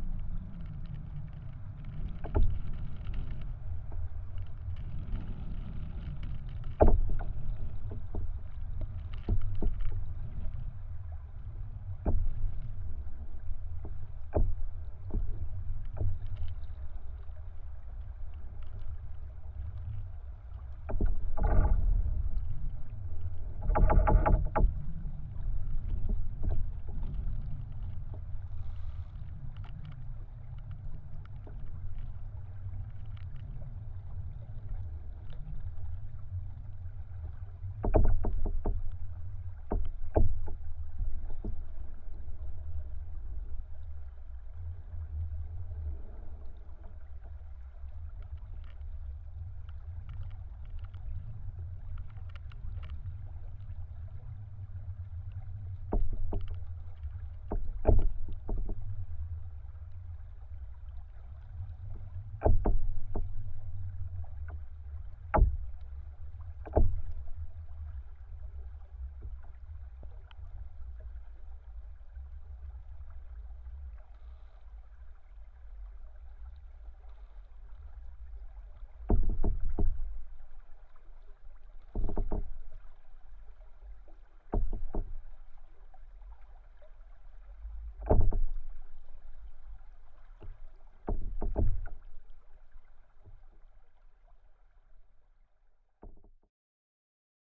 {
  "title": "Lithuania, rubbing pine trees",
  "date": "2020-03-22 15:30:00",
  "description": "sounding trees at river Savasa. recorded with contact microphone",
  "latitude": "55.64",
  "longitude": "25.86",
  "altitude": "153",
  "timezone": "Europe/Vilnius"
}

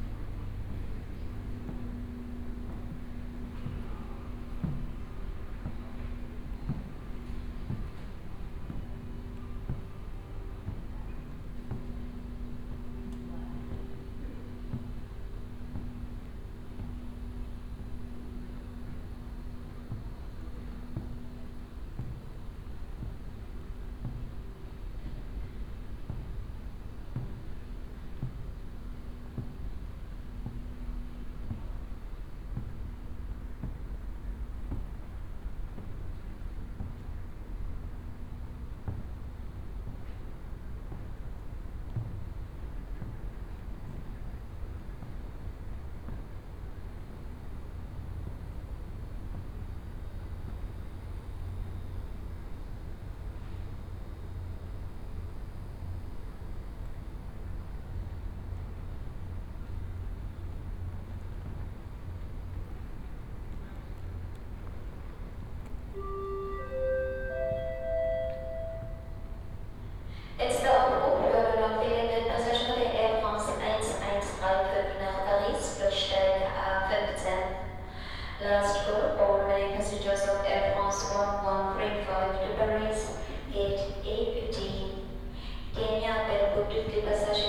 {
  "title": "Berlin Tegel airport terminal D - short sound walk in terminal D",
  "date": "2011-04-16 07:05:00",
  "description": "short early morning walk along terminal D while waiting for departure",
  "latitude": "52.55",
  "longitude": "13.29",
  "altitude": "31",
  "timezone": "Europe/Berlin"
}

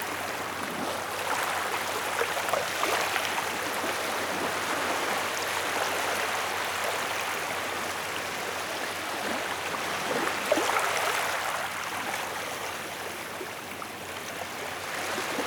{
  "title": "Tubkaek Beach - Close recording of small waves on the beach, in Thailand",
  "date": "2019-10-19 23:50:00",
  "description": "During the night at Tubkaek Beach in Thailand, microphone very very close to the waves on the beach.\nRecorded by an ORTF Setup Schoeps CCM4x2 in a Cinela Windscreen\nRecorder Sound Devices 633\nSound Ref: TH-181019T01\nGPS: 8.089738, 98.746327",
  "latitude": "8.09",
  "longitude": "98.75",
  "altitude": "13",
  "timezone": "Asia/Bangkok"
}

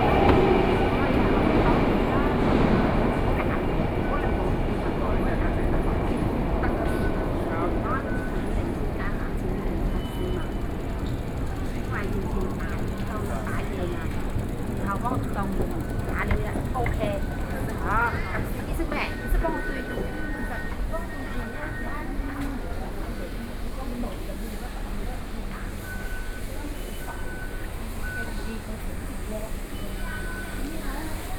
{
  "title": "Longshan Temple Station, Taipei city - In subway station",
  "date": "2012-11-10 15:27:00",
  "latitude": "25.04",
  "longitude": "121.50",
  "altitude": "8",
  "timezone": "Asia/Taipei"
}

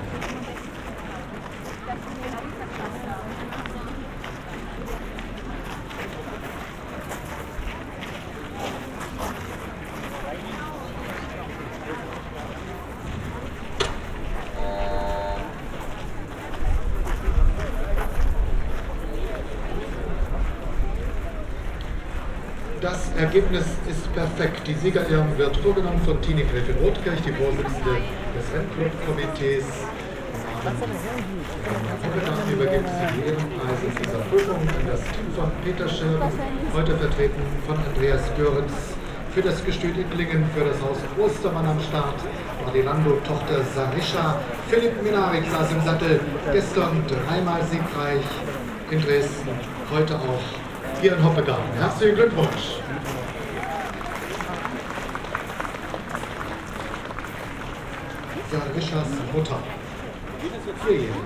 {
  "title": "dahlwitz-hoppegarten: galopprennbahn - the city, the country & me: racecourse, courtyard",
  "date": "2013-05-05 16:22:00",
  "description": "award ceremony for the forth race (\"preis von abu dhabi\")\nthe city, the country & me: may 5, 2013",
  "latitude": "52.51",
  "longitude": "13.67",
  "altitude": "50",
  "timezone": "Europe/Berlin"
}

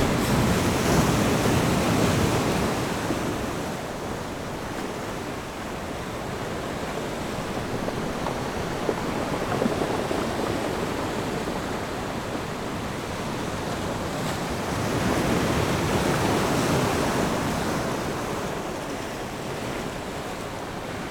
{
  "title": "芹壁村, Beigan Township - sound of the waves",
  "date": "2014-10-13 16:09:00",
  "description": "Sound of the waves Pat tide dock\nZoom H6 +Rode NT4",
  "latitude": "26.22",
  "longitude": "119.98",
  "altitude": "14",
  "timezone": "Asia/Taipei"
}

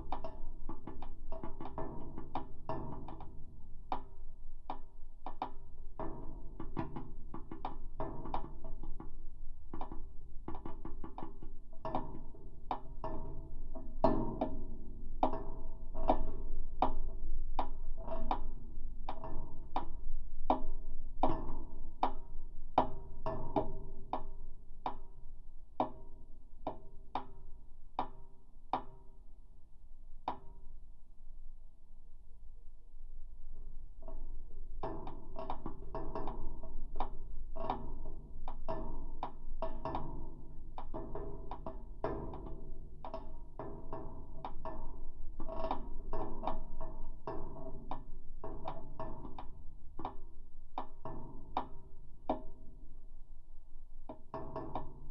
{
  "title": "Utena, Lithuania. lamp pole",
  "date": "2021-01-20 10:10:00",
  "description": "this is some kind of \"sign\" sound to me. broken lamp pole in the park. when there;s wind and I pass by, I always stop to listen it",
  "latitude": "55.50",
  "longitude": "25.59",
  "altitude": "106",
  "timezone": "Europe/Vilnius"
}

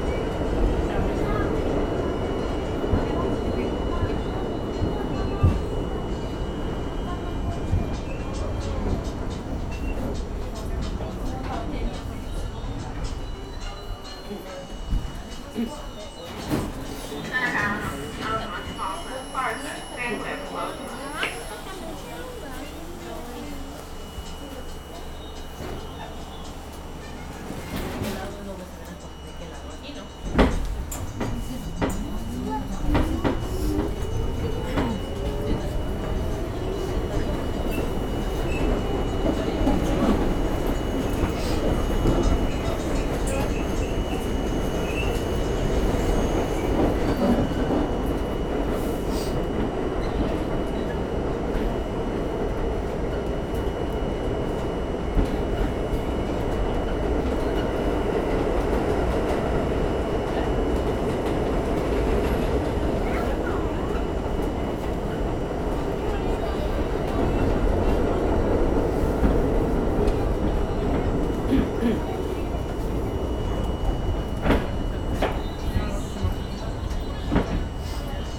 {
  "title": "Myrtle Ave, Brooklyn, NY, USA - M Train - Myrtle Wyckoff to Forest Avenue",
  "date": "2018-04-05 13:20:00",
  "description": "Sounds from the M Train.\nShort ride from Myrtle Wyckoff to Forest Avenue.",
  "latitude": "40.70",
  "longitude": "-73.91",
  "altitude": "18",
  "timezone": "America/New_York"
}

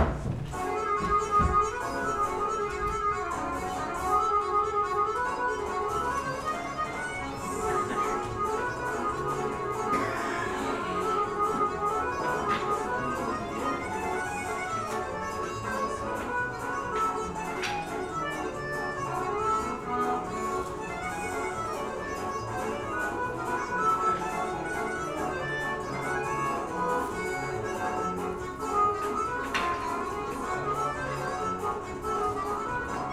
{
  "title": "berlin, hobrechtstraße: mama bar - the city, the country & me: busy bar woman",
  "date": "2011-04-18 20:47:00",
  "description": "almost empty bar, bar woman busy with chairs and tables\nthe city, the country & me: april 18, 2011",
  "latitude": "52.49",
  "longitude": "13.43",
  "altitude": "44",
  "timezone": "Europe/Berlin"
}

